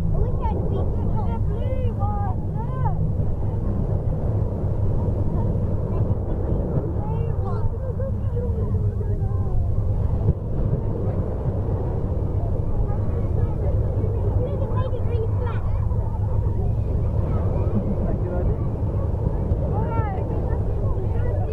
Beach at overcombe in summer 2009
in summer 2009 on beach at overcombe corner. rumble of sea and pebbles. Family talking in distance.